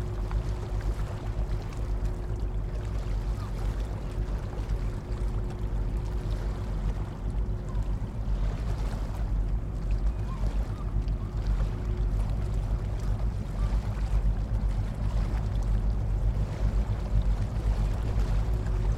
Dunkerque Port Est tugs - DK Port Est tugs
Dunkerque, Port Est, two tugs passing towards the sealock. Zoom H2.